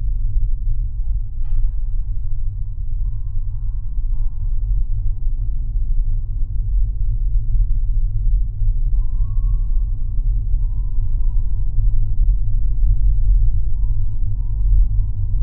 Vyžuonos, Lithuania, watertower ladder
new, shinny metallic ladder on watertower. listening through contact mics. calm evening....